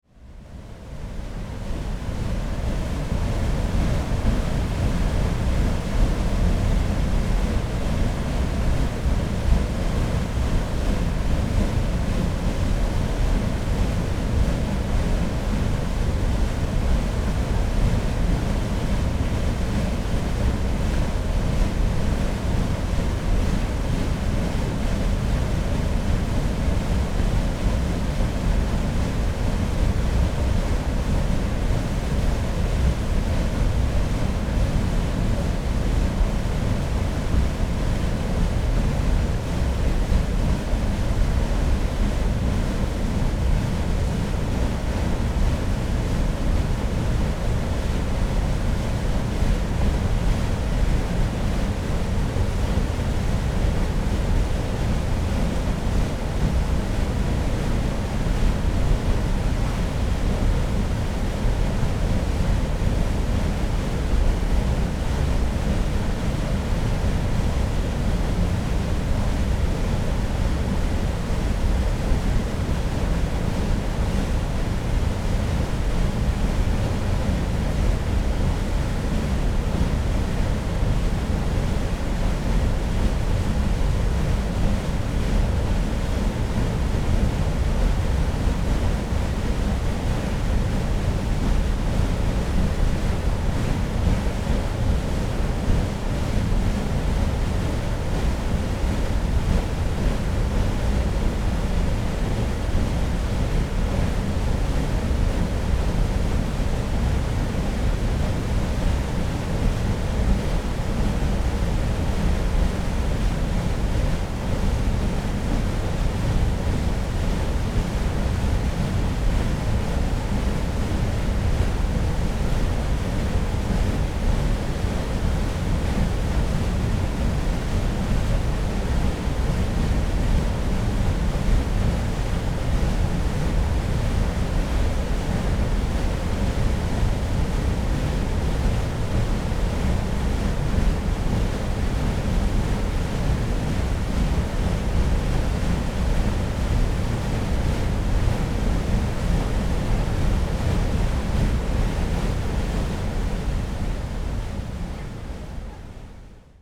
Gdańsk, Poland, at the old watermill
some waterfall at the old watermill
14 August, 11:40